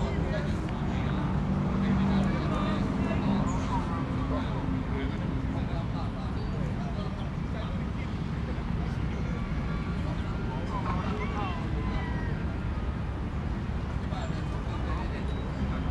Baseball, a group of people are singing, Rode NT4+Zoom H4n
New Taipei City, Taiwan, 12 February, ~16:00